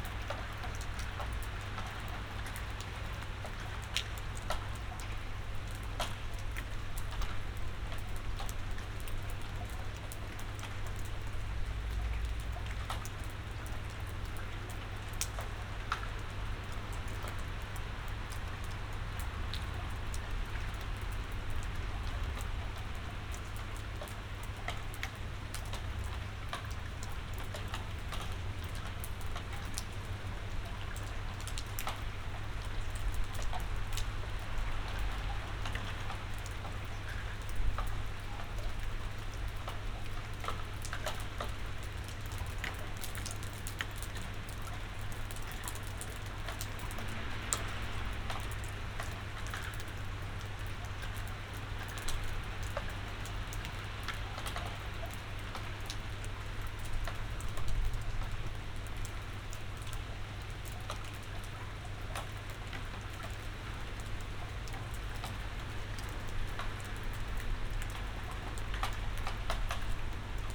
berlin, sanderstraße: unter balkon - the city, the country & me: under balcony
the city, the country & me: july 17, 2012
99 facets of rain